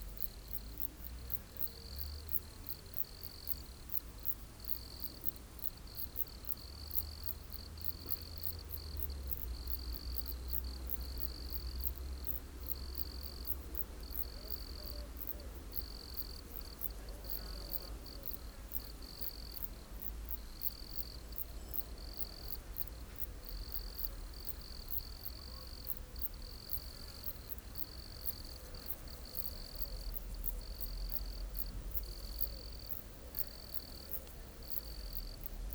Lombron, France - Crickets
On a corner of the Lombron farm, small criquets are singing quietly. This is a peaceful evening in the farm.
14 August 2017